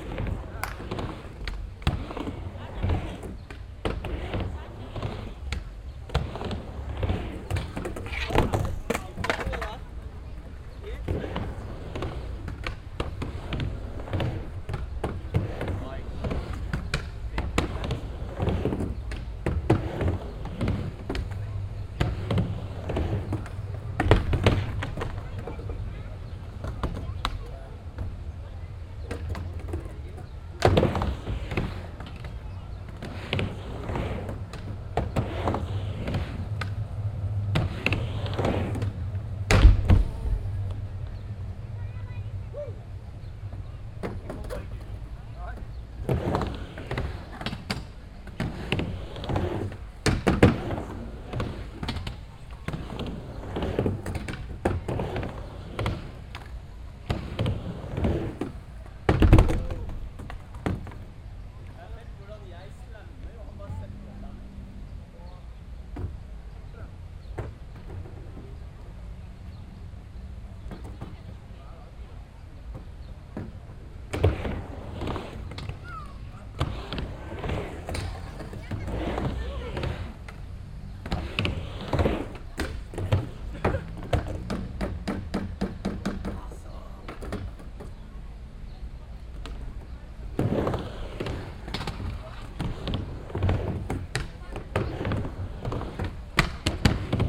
Norway, Oslo, skatebord, skatepark, binaural